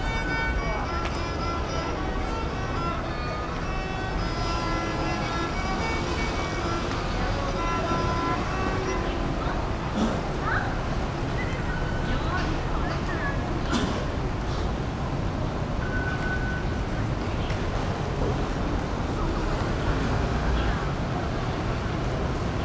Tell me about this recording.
The elderly in the singing outside the MRT station, Sony PCM D50 + Soundman OKM II